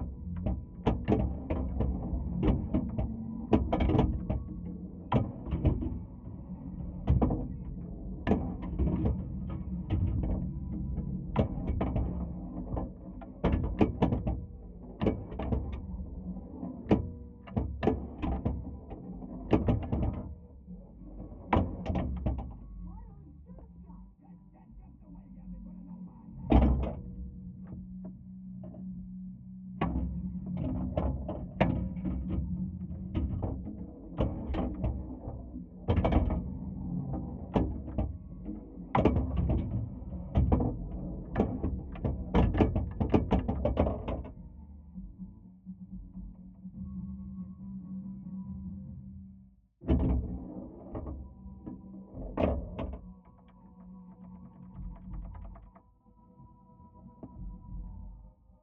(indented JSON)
{
  "title": "Larkspur, CO, USA - Trini playing Pinball",
  "date": "2016-12-29 14:08:00",
  "description": "Recorded with a pair of JrF contact mics into a Marantz PMD661",
  "latitude": "39.25",
  "longitude": "-104.91",
  "altitude": "2084",
  "timezone": "America/Denver"
}